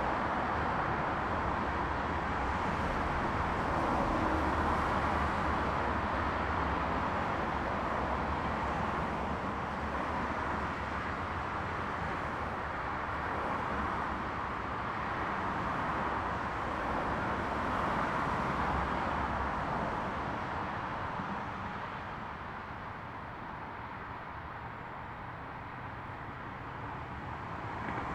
Berlin Wall of Sound, koenigsweg bridge over highway 120909